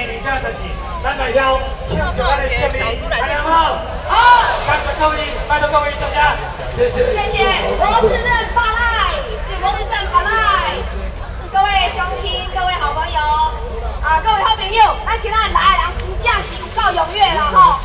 Ketagalan Boulevard, Taipei - Ketagalan Boulevard
Ketagalan Boulevard, Distant election propaganda speeches, The crowd to participate in election campaigns, Rode NT4+Zoom H4n
台北市 (Taipei City), 中華民國, 10 December